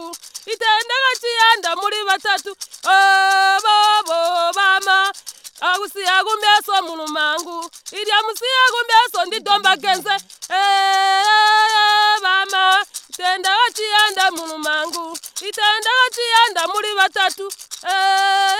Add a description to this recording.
Labecca Munkuli sings a song in which a girl laments to her mother about her mistake of getting married too early, dropping out of education, and now, being trapped in the situation while her husband is taking more wives. This song is meant as a warning song for girls and Labecca truly brings it “on stage” as such. a recording made by Margaret Munkuli, community based facilitator for Zubo in Manjolo. a recording from the radio project "Women documenting women stories" with Zubo Trust, a women’s organization in Binga Zimbabwe bringing women together for self-empowerment.